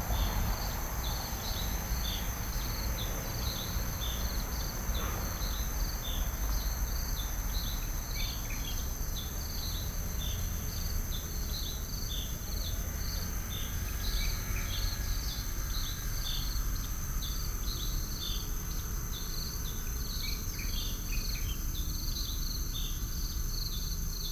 Beitou, Taipei - Early in the morning
Early in the morning, Sony PCM D50 + Soundman OKM II